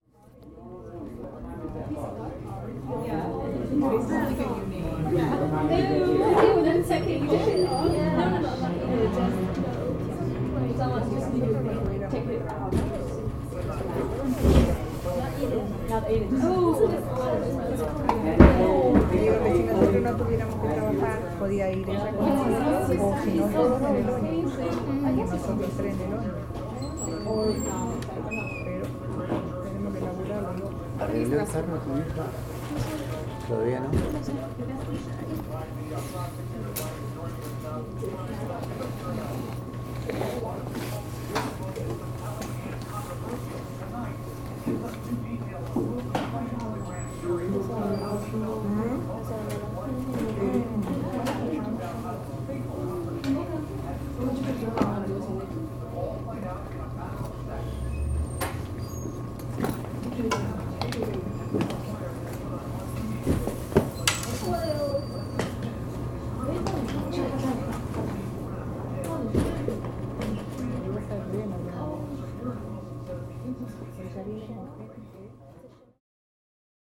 Hamilton St Allentown, PA - Allentown Bus Terminal
Tuesday evening in the Allentown Bus Terminal lobby